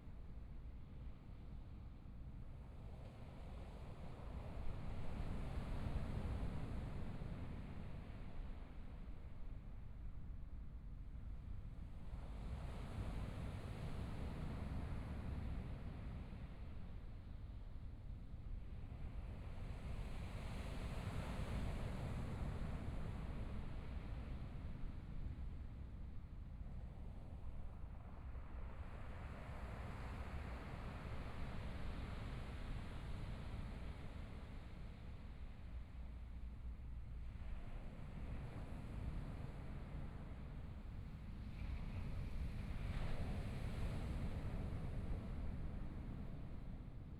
Beibin Park, Hualien City - Sound of the waves
Sound of the waves, Aircraft flying through
Binaural recordings
Zoom H4n+ Soundman OKM II
Hualian City, 花蓮北濱外環道